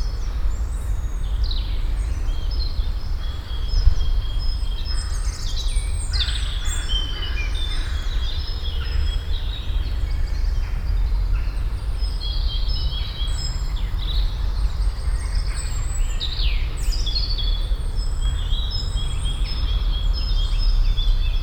Gebrüder-Funke-Weg, Hamm, Germany - morning spring birds Heessener Wald
hum of the morning rush hour still floating around the forest in seasonal mix with bird song
Nordrhein-Westfalen, Deutschland, 8 April 2015, 08:32